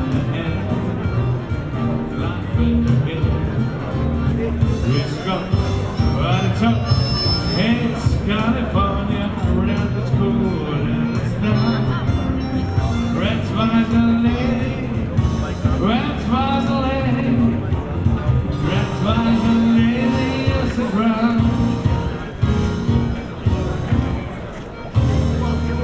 courtyard between the races, jazz band
the city, the country & me: may 5, 2013
5 May 2013, 16:30